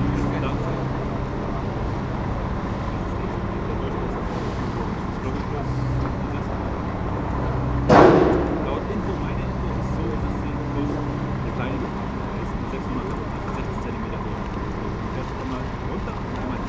berlin: friedelstraße - the city, the country & me: sewer works
excavator loading a truck. site engineer asked me if I performed a noise level measurement - when I said no, he began to explain the works...
the city, the country & me: december 5, 2013
Berlin, Germany, 5 December 2013